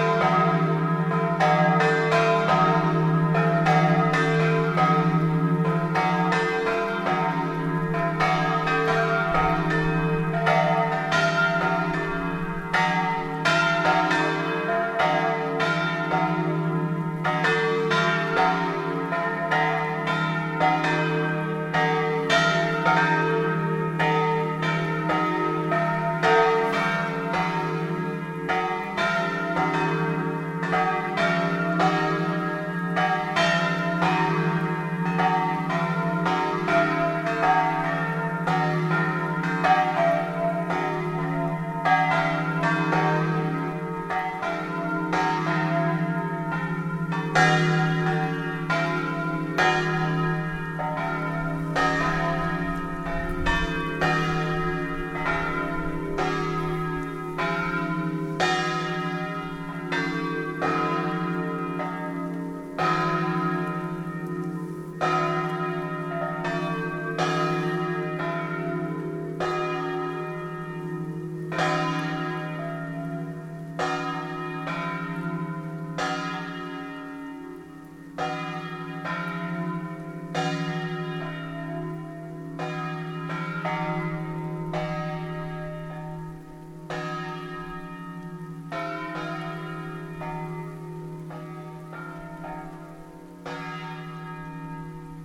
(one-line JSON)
{"title": "poffabro - dietro al campanile mezzogiorno", "date": "2010-10-17 12:00:00", "description": "campane di poffabro con la pioggia a ottobre (ROMANSOUND) edirol", "latitude": "46.22", "longitude": "12.71", "altitude": "524", "timezone": "Europe/Rome"}